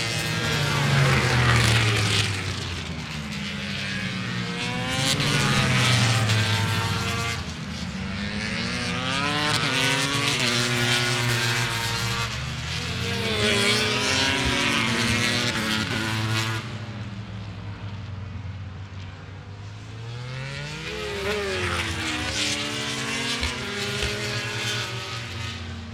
Donington Park Circuit, Derby, United Kingdom - British Motorcycle Grand Prix 2005 ... moto grandprix ...
British Motorcycle Grand Prix 2005 ... free practice one ... part one ... the era of the 990cc bikes ... single point stereo mic to minidisk ...